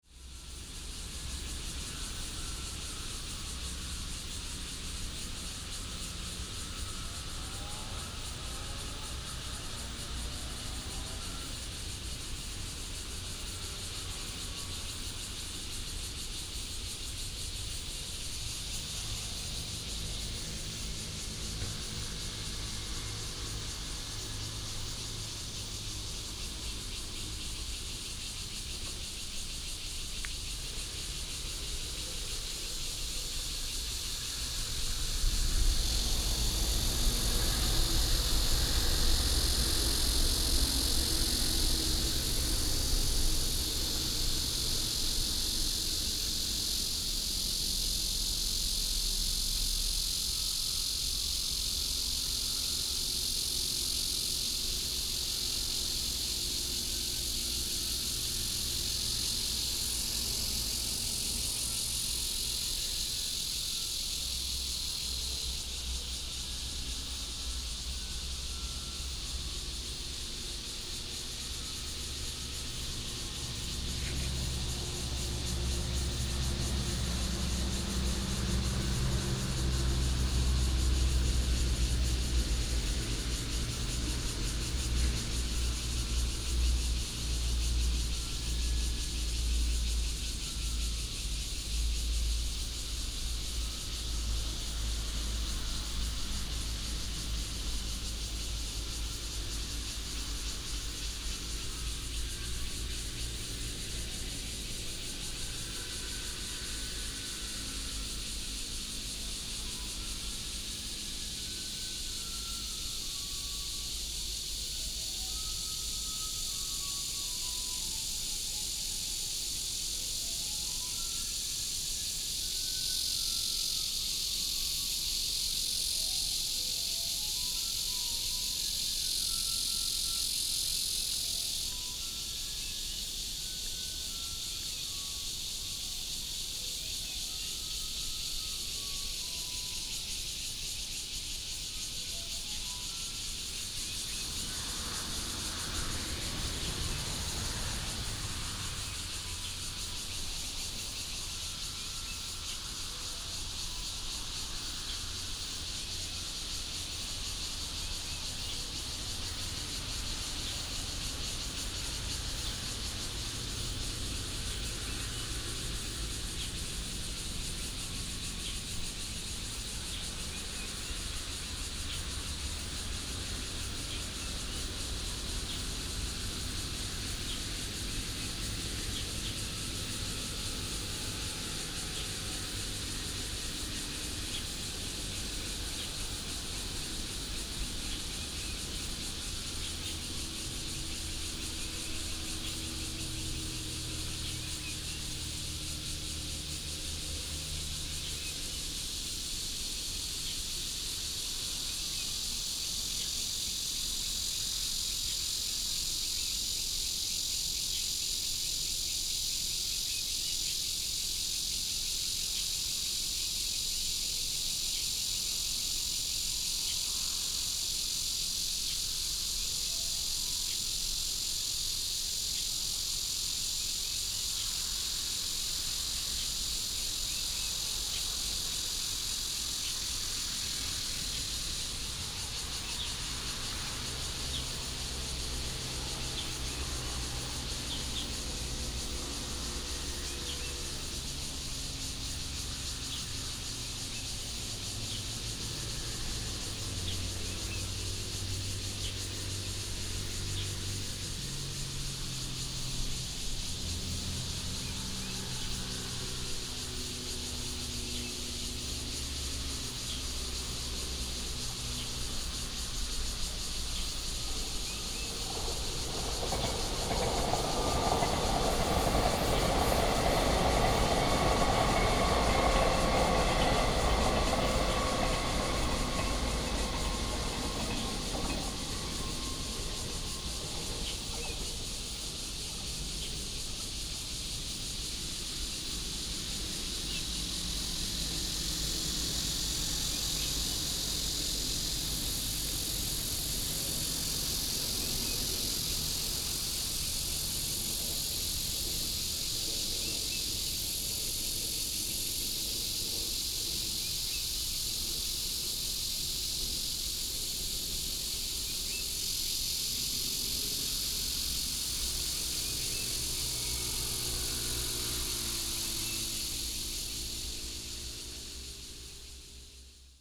民富路一段, Yangmei Dist. - Next to the pool
Cicada cry, Bird call, train runs through, Traffic sound, Next to the pool
Taoyuan City, Taiwan, August 2017